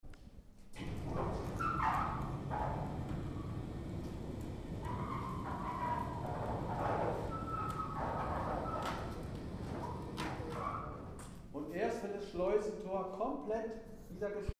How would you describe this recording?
The sound of the closing gate at Ministry for State Security (Stasi) prison Hohen Schoenhausen, Berlin. Prisoners were transported in a van disguised as a food transporter. The van drove into a hall. The prisoners would not be taken out of the van until the gate was closed, to avoid the opportunity for them to make any observation that would give them an idea ybout the location. Today former prisoners give guided tours in the prison.